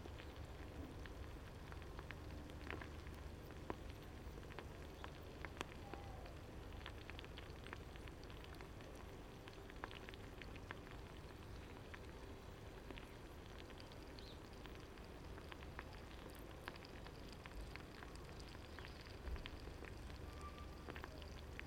Chemin des Sablons, La Rochelle, France - Tagine cooking in the garden
Tagine cooking in the garden
ORTF DPA 4022 + Rycotte + Mix 2000 AETA + edirol R4Pro
France métropolitaine, France, April 2020